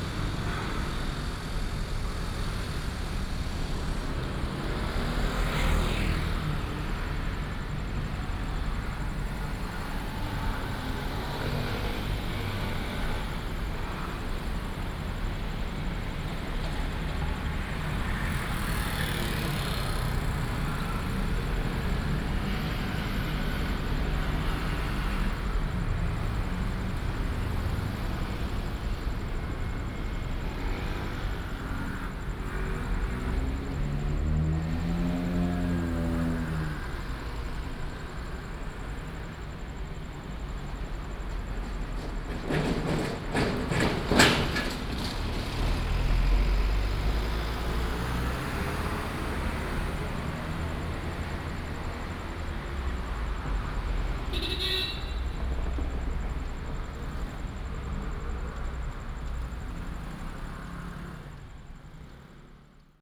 {"title": "Hongchang 13th St., Taoyuan Dist. - Traffic sound", "date": "2017-07-17 20:04:00", "description": "At the junction of the railway crossing, Traffic sound, The train runs through", "latitude": "24.98", "longitude": "121.29", "altitude": "109", "timezone": "Asia/Taipei"}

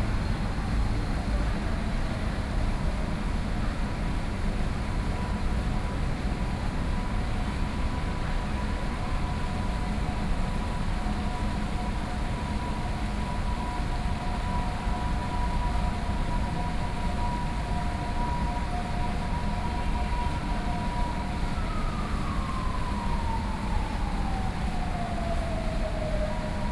{"title": "Banqiao District, New Taipei City - In the plaza", "date": "2013-10-12 15:15:00", "description": "In the plaza outside the government building, Pool sound, Students practice dance music, Binaural recordings, Sony Pcm d50+ Soundman OKM II", "latitude": "25.01", "longitude": "121.47", "altitude": "12", "timezone": "Asia/Taipei"}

